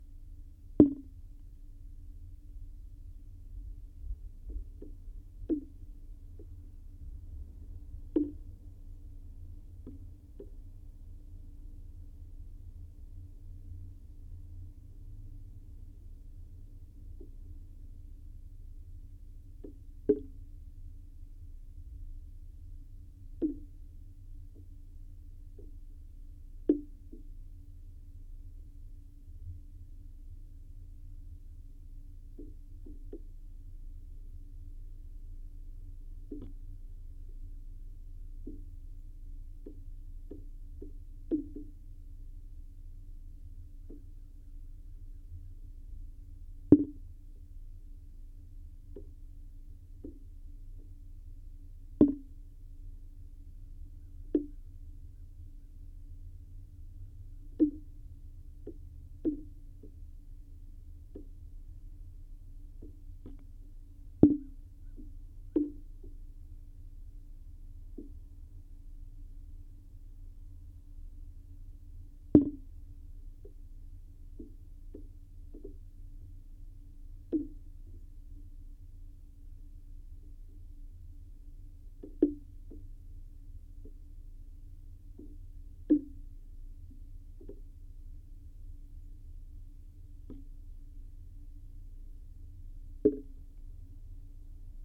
Condensation had formed on the metal roof of the South Gateway Pavilion at Coler Mountain Bike Preserve. This is a geophone recording from the wood trim on the floor of the pavilion of water droplets landing on it from the roof.